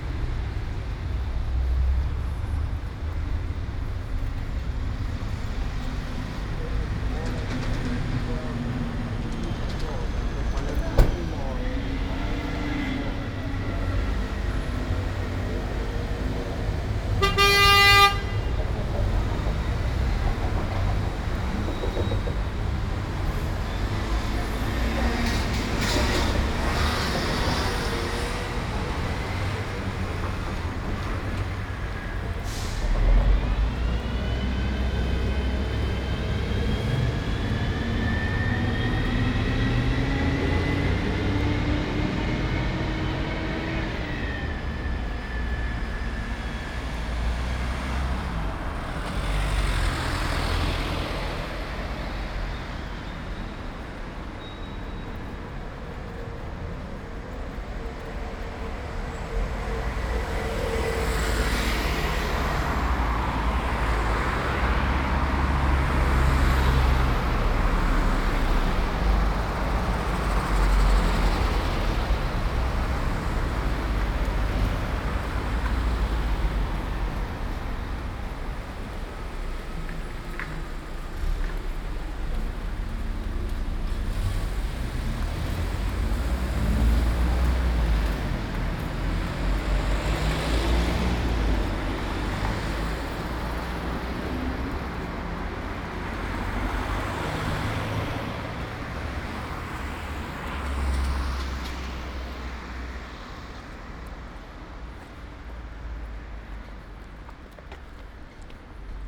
Ascolto il tuo cuore, città. I listen to your heart, city. Several chapters **SCROLL DOWN FOR ALL RECORDINGS ** - Morning (far) walk AR with break in the time of COVID19 Soundwalk
"Morning (far) walk AR with break in the time of COVID19" Soundwalk
Chapter CXVIII of Ascolto il tuo cuore, città. I listen to your heart, city
Thursday, July 16th, 2020. Walk to a (former borderline far) destination. Round trip where the two audio files are joined in a single file separated by a silence of 7 seconds.
first path: beginning at 11:13 a.m. end at 11:41 a.m., duration 27’42”
second path: beginning at 11:57 p.m. end al 00:30 p.m., duration 33’00”
Total duration of recording: 01:00:49
As binaural recording is suggested headphones listening.
Both paths are associated with synchronized GPS track recorded in the (kmz, kml, gpx) files downloadable here:
first path:
second path:
Go to Chapter LX, Wednesday, April 29th, 2020: same path and similar hours.